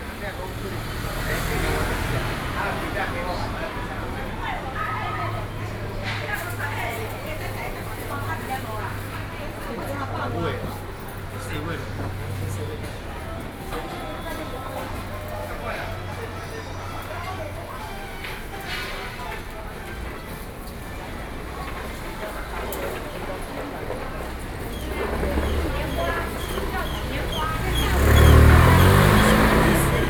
New Taipei City, Taiwan - Traditional markets